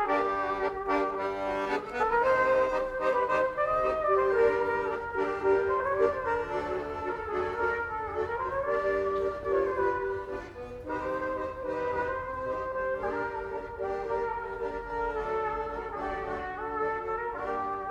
Three musicians, two trumpeters and one accordionist walk slowly along the cobbled streets of Moabit in the morning sun.